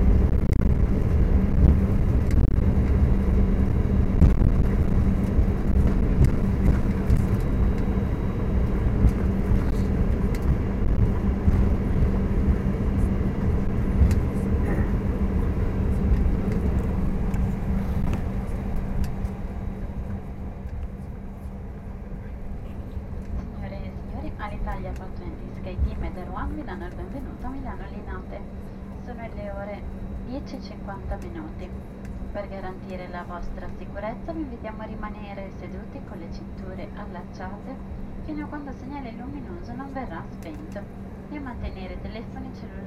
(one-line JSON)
{"title": "track landing (romanlux)", "description": "atterraggio a Milano 1/2/10 h10,50 volo da Palermo (edirolr-09hr)", "latitude": "45.44", "longitude": "9.28", "altitude": "99", "timezone": "Europe/Berlin"}